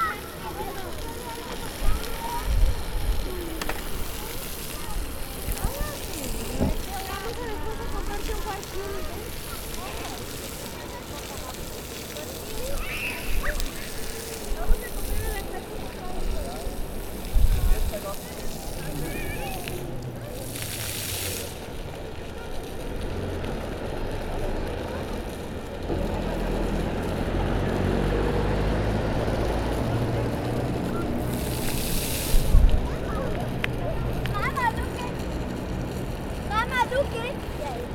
Springbrunnen vor dem Bundeshaus
Springbrunnen, Bundeshaus, Bundesplatz, Juchzger durch die Wasserfontänen, urbane Geräusche, verspielte Atmosphäre bei warmem Wetter
Bern, Schweiz, 10 June 2011